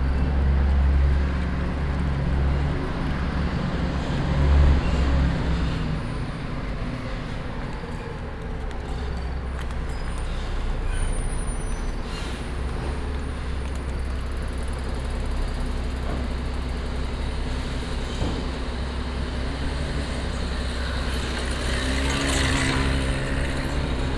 amsterdam, runstraat
morning atmosphere at a small shopping street in the city center
city scapes international - social ambiences and topographic field recordings
Amsterdam, The Netherlands, 11 July 2010